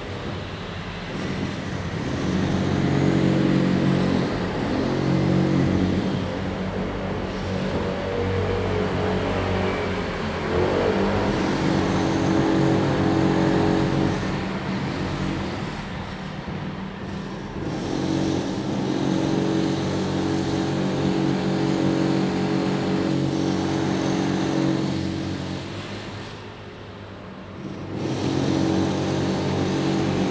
Daren St., 淡水區, New Taipei City - Construction noise
Traffic sound, Construction noise
Zoom H2n MS+XY
New Taipei City, Taiwan